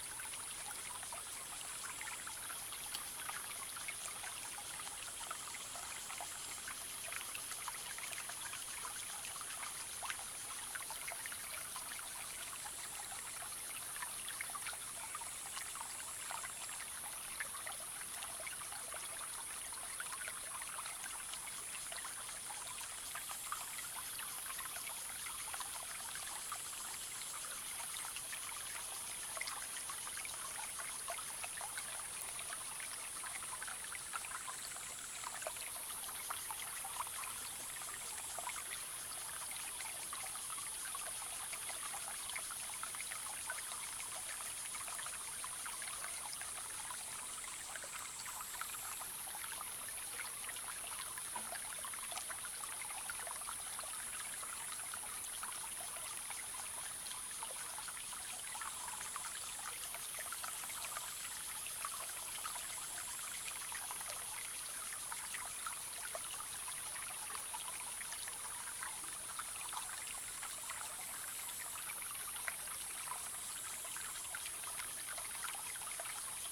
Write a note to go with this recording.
Brook, small stream, Sound of water, Zoom H2n MS+XY+Spatial audio